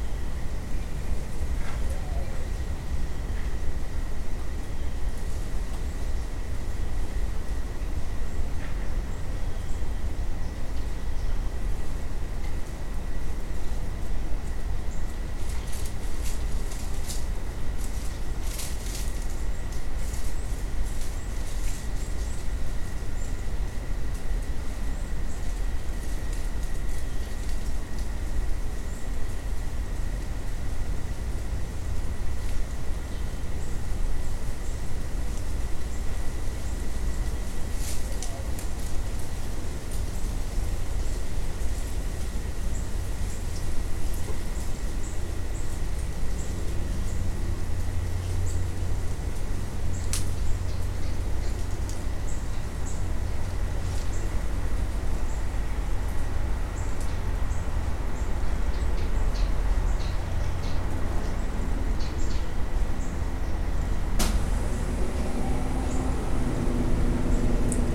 Glendale Ln, Beaufort, SC, USA - Back Porch By The Marsh
The back porch of a house which is situated right in front of some marsh land. Squirrels, songbirds, a woodpecker, and an owl are among the many sounds heard.
[Tascam DR-100mkiii & Primo EM-272 omni mics]
South Carolina, United States, 24 December, 11:42